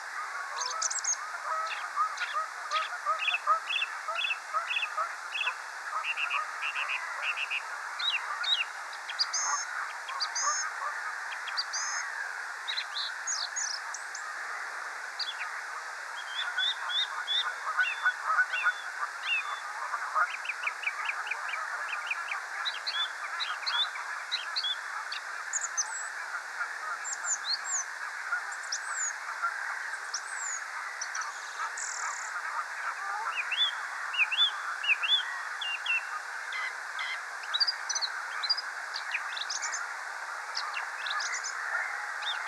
Recorded end of April beginning of May at nightfall
Walenhoek in Schelle/Niel (51°06'37.7"N 4°19'09.6"E)
Recorded with Zoom H4n Pro
created by Wouter lemmens